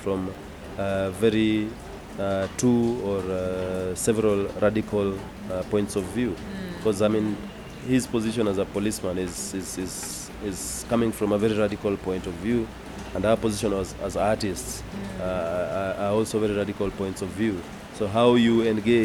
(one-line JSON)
{"title": "GoDown Art Centre, South B, Nairobi, Kenya - What culture are we talking about...?", "date": "2010-05-11 16:07:00", "description": "… I had been starting the recording somewhere in the middle of talking to Jimmy, so here Jimmy describes Ato’s performance in more detail…", "latitude": "-1.30", "longitude": "36.83", "altitude": "1653", "timezone": "Africa/Nairobi"}